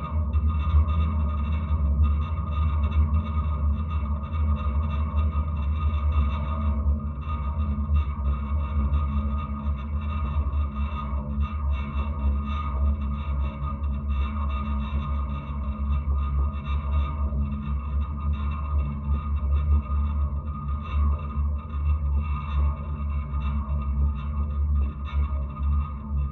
Utena, Lithuania, supporting wires
contact microphones on the mobile tower supporting wires
8 September 2013, ~15:00